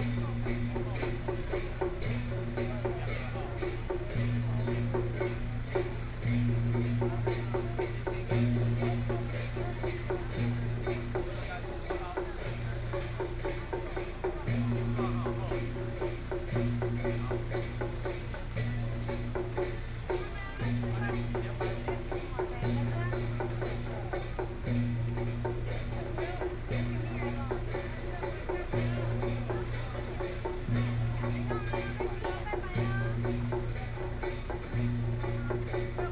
Buddhist Temple, Luzhou - Traditional temple Festival
The crowd, Standing in the square in front of the temple, Traditional temple Festival, Binaural recordings, Sony PCM D50 + Soundman OKM II
New Taipei City, Taiwan